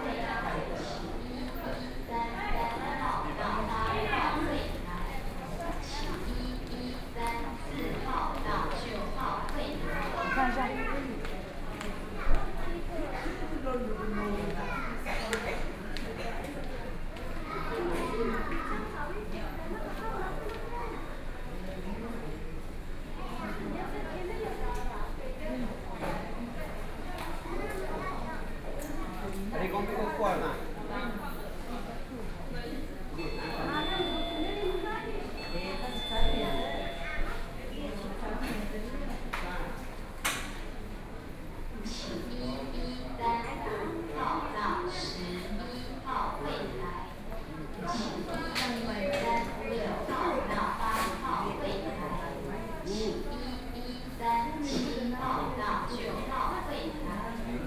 Lotung Poh-Ai Hospital, Yilan County - In the hospital
In the hospital in front of the counter prescriptions, Binaural recordings, Zoom H4n+ Soundman OKM II